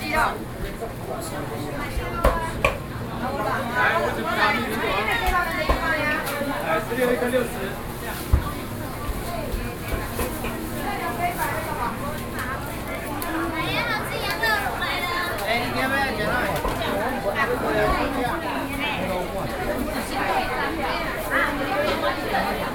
Ln., Sec., Wenhua Rd., Banqiao Dist., New Taipei City - Traditional markets
Banqiao District, New Taipei City, Taiwan, 3 November 2012, 09:30